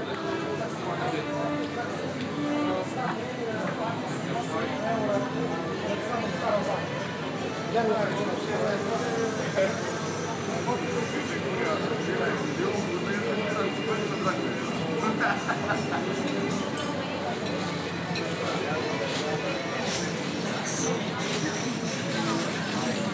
Square at Kotor, Czarnogóra - (219) BI square with restaurants and tourists
Binaural recording of square full of tourists and restaurants, with a violinist and music from radio as well.
ZoomH2n, Soundmann OKM
Opština Kotor, Crna Gora, 13 July